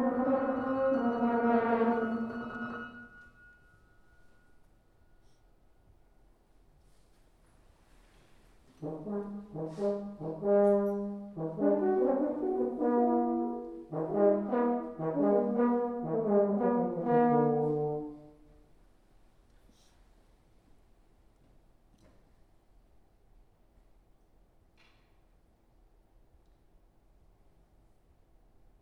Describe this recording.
This is a live composition described as a 'sound painting' performed by a horn class at the University of Iowa. The performance utilized the French Horn and its pieces, the voice, movement, and noises generated by the audience. This was recorded with a Tascam DR MKIII. This was one portion of a concert lasting approximately 1 hour and 15 minutes in total.